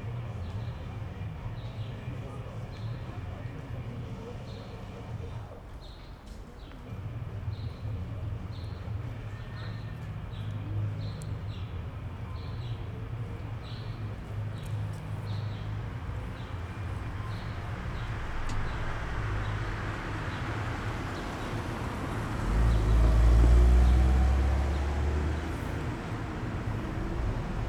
berlin wall of sound-heidelberger-elsenstr. j.dickens 020909